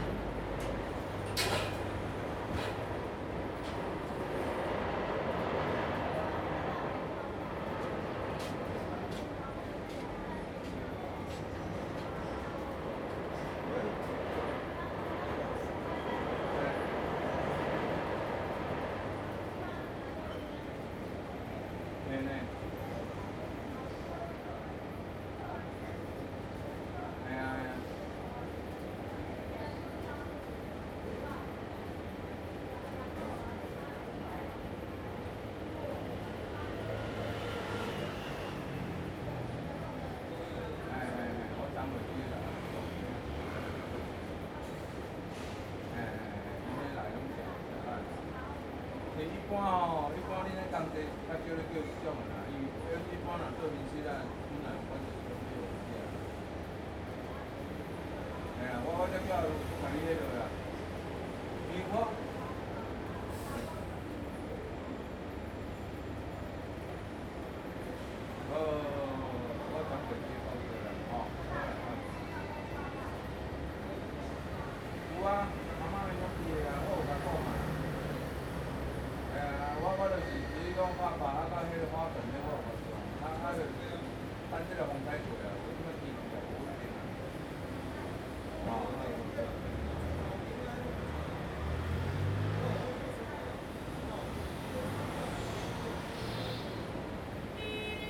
Ln., Yingshi Rd., Banqiao Dist. - Coffee shop
In the Coffee shop, Sound of an ambulance, Sound from the construction site
Zoom H2n MS+ XY
New Taipei City, Taiwan, 21 August 2015